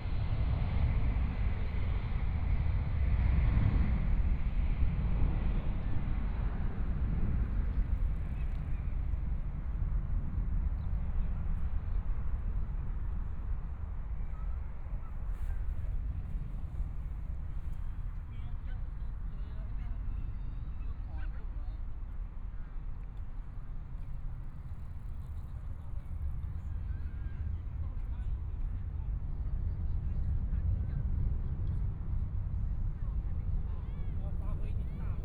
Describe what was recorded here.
Riverside Park, The distant sound of aircraft taking off, Holiday, Sunny mild weather, Please turn up the volume, Binaural recordings, Zoom H4n+ Soundman OKM II